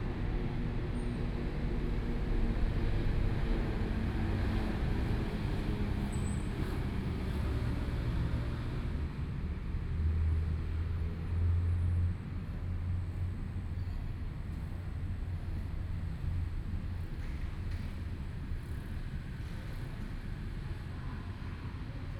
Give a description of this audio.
Traffic Sound, In the bottom of the track, MRT train sounds, Please turn up the volume a little. Binaural recordings, Sony PCM D100+ Soundman OKM II